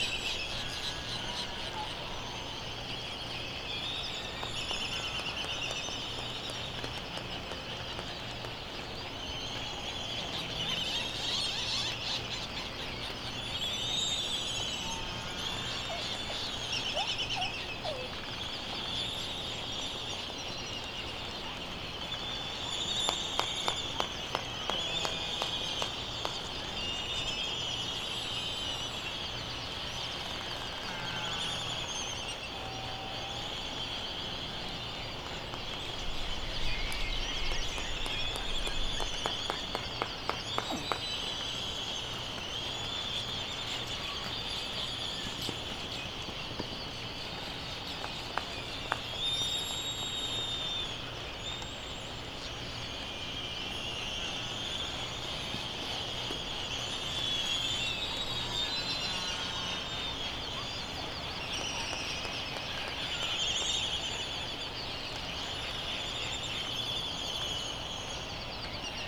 United States Minor Outlying Islands - Laysan albatross soundscape ...
Sand Island ... Midway Atoll ... open lavalier mics ... sometimes everything just kicked off ... this is one of those moments ... some birds may have been returning with food or an influx of youngsters ..? bird calls ... laysan albatross ... white tern ... bonin petrel ... black noddy ... canaries ... background noise ... traffic ... voices ...